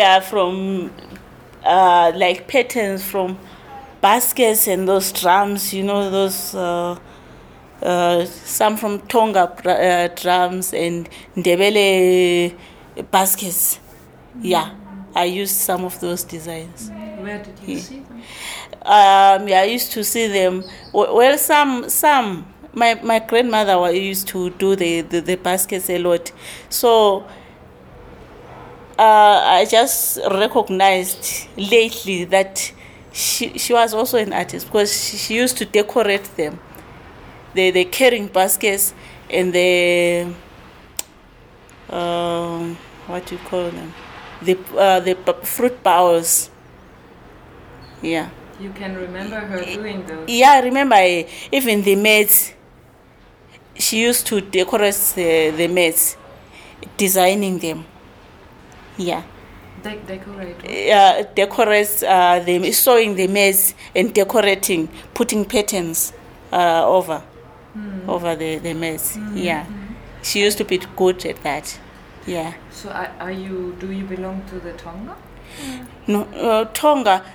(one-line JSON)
{"title": "NGZ back-yard, Makokoba, Bulawayo, Zimbabwe - Nonhlanhla - my gran was a bit of a Tonga...", "date": "2012-10-26 16:30:00", "description": "…we resume our recording with Nonhlanhla at the far end of the back yard, just in front of the care-taker’s house. Nonnie talks about her grandmother who ”was a bit of an artist…”, weaving mats and baskets and introducing the young girl to the traditional patterns in Ndebele and Tonga culture…\nFind Nonhlanhla’s entire interview here:", "latitude": "-20.15", "longitude": "28.58", "altitude": "1351", "timezone": "Africa/Harare"}